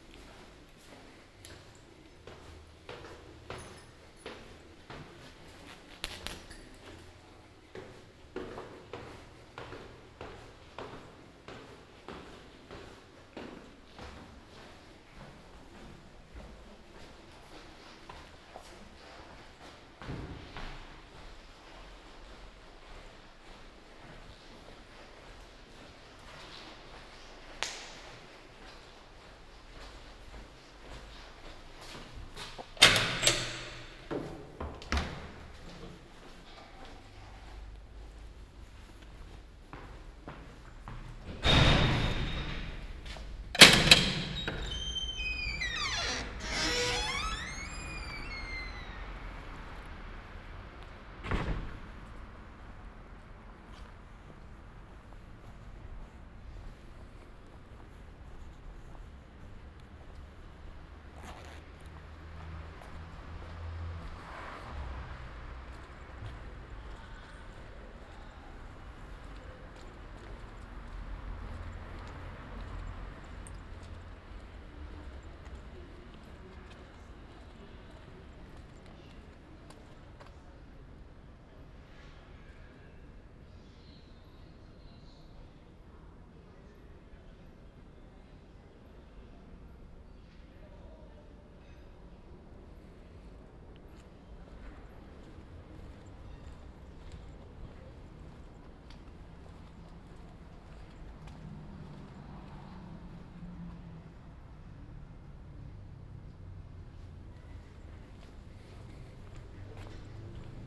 Ascolto il tuo cuore, città. I listen to your heart, city. Several Chapters **SCROLL DOWN FOR ALL RECORDINGS - La flânerie aux temps de la phase IIB du COVID19 Soundwalk
"La flânerie aux temps de la phase IIB du COVID19" Soundwalk
Chapter LXXXIII of Ascolto il tuo cuore, città. I listen to your heart, city
Thursday May 21 2020. Walking in the movida district of San Salvario, Turin four nights after the partial reopening of public premises due to the COVID19 epidemic. Seventy two days after (but day seventeen of Phase II and day four of Phase IIB) of emergency disposition due to the epidemic of COVID19.
Start at 9:48 p.m. end at 10:28 p.m. duration of recording 39’58”
The entire path is associated with a synchronized GPS track recorded in the (kml, gpx, kmz) files downloadable here: